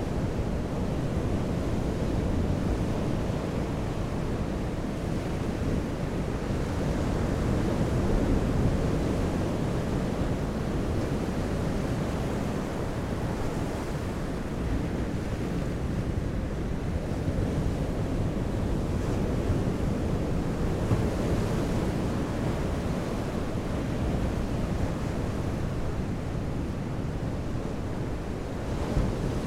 Benicasim, Castellón, España - Voramar
Voramar, Rode nt-5 (Omni) + Mixpre + Tascam Dr-680, With Jercklin "Disk" DIY
8 April 2015, 19:30